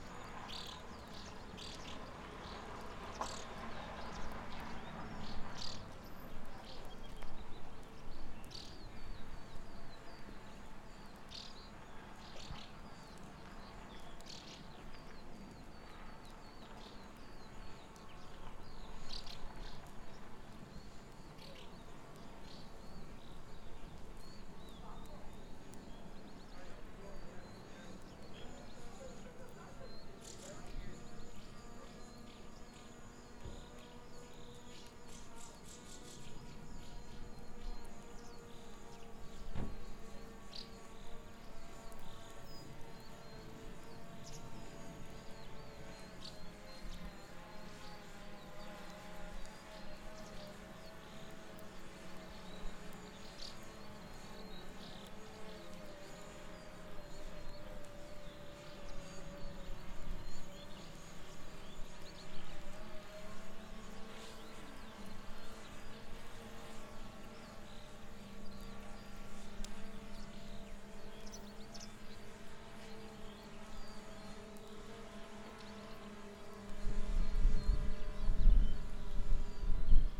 Buzludzha, Bulgaria, Drone
In front of the ruin of Buzludzha there are visitors who talk, birds chirping, but after a while a strange sound becomes audible, like a swarm of bees, but in fact it is a drone from two people from Switzerland (as I got to know later) that I could not see
Стара Загора, Бългaрия